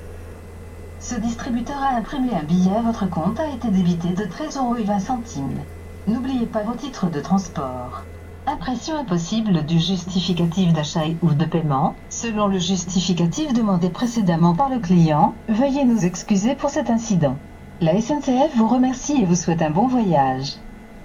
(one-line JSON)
{"title": "Maintenon, France - Train ticket machine", "date": "2016-07-28 19:40:00", "description": "Taking a train ticket to the automatic machine, after coming back from the platform to Chartres.", "latitude": "48.59", "longitude": "1.59", "altitude": "120", "timezone": "Europe/Paris"}